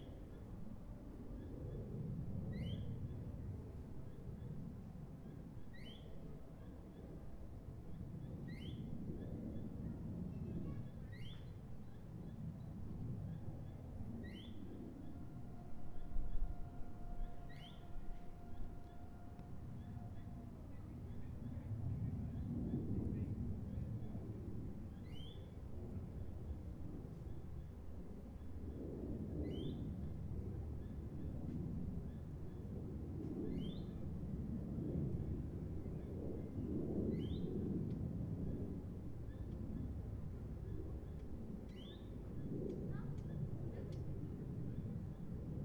Bois, Chemin du Calvaire, Antibes, France - Forest birds & plane
In a forest on the way to the Eglise Notre Dame de la Garoupe. At the start you can hear a plane overhead and throughout the track two birds calling to each other. One of the birds stops calling but the other continues consistently. You can also hear walkers, other birds, and more planes.
Recorded on ZOOM H1.
4 May 2014, 14:02